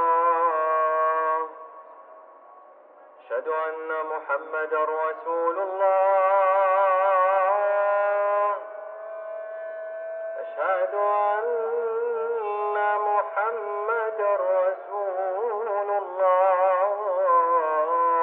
Muharraq, Bahreïn - Mosquée Alfurdha - Port de pêche de Muharraq - Bahrain
Mosquée Alfurdha - Port de pêche de Muharraq - Bahrain
Appel à la prière de 18h35
محافظة المحرق, البحرين